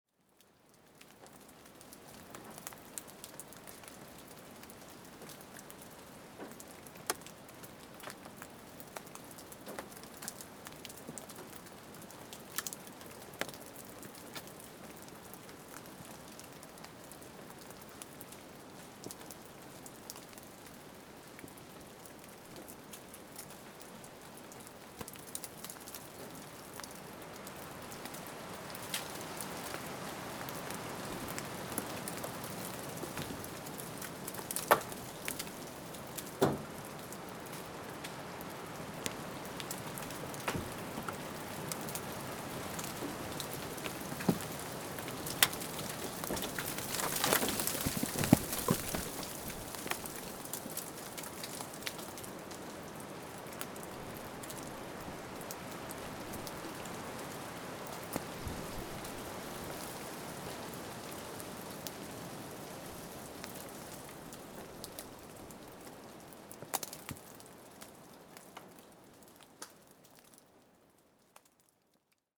Guilhemot, Gabre, France - Rain in forest
Rain in forest with some wind, some drops on the van, Zoom H6
March 2018